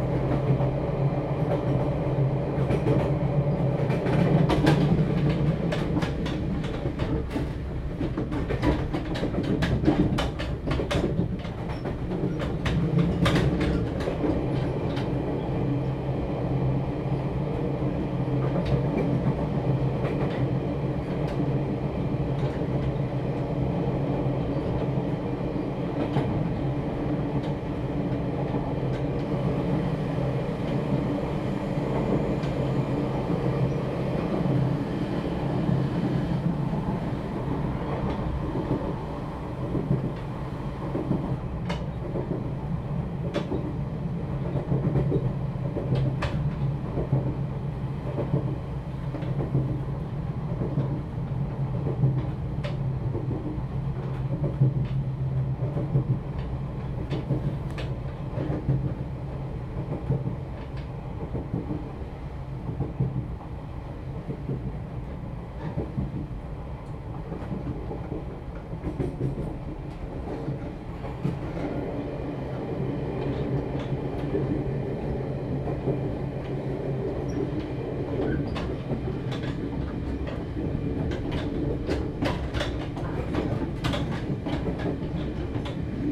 Qidu Dist., Keelung City - In the train compartment joint passage
In the train compartment joint passage, Traffic sound
Binaural recordings, Sony PCM D100+ Soundman OKM II